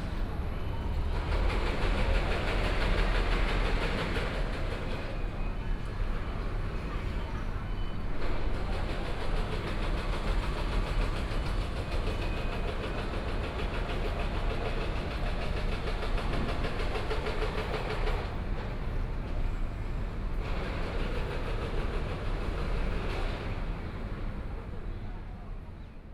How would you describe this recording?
Sound from station construction